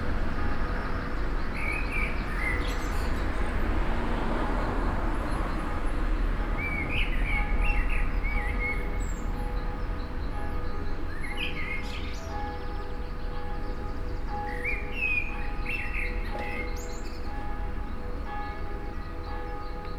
two bells competing... ambience notably quieter due to stay-at-home... beyond corona, both bells happen to be in need of repair or reset...
Nordrhein-Westfalen, Deutschland, April 2020